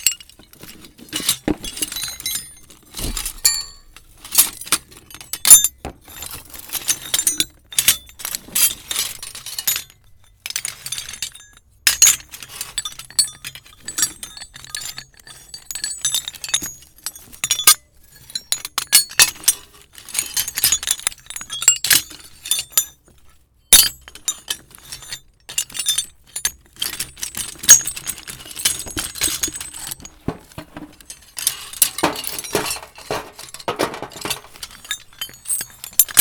rummaging with scraps of metal, old pipes, chains, bolts, wire, nails, rusty tools etc.